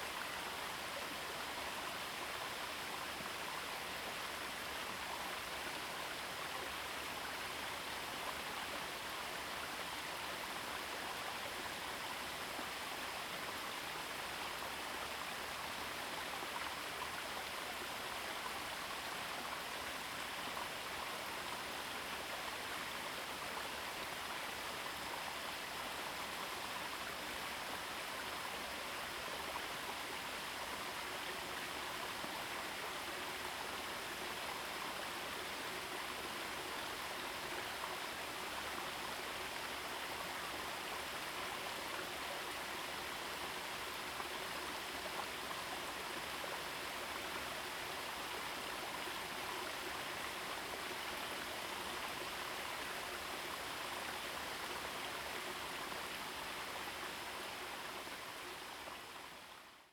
猴龍溪, 五城村, Yuchi Township - Stream sound
Stream sound, Bird sounds
Zoom H2n MS+XY
Yuchi Township, 華龍巷41-2號, 4 May 2016